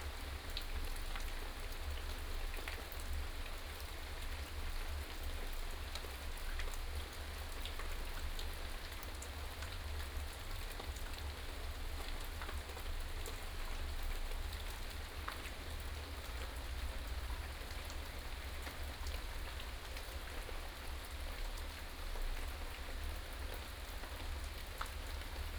富陽自然生態公園, Taipei City - Rain
in the Park, Rain, In abandoned military tunnel, Thunder
Taipei City, Taiwan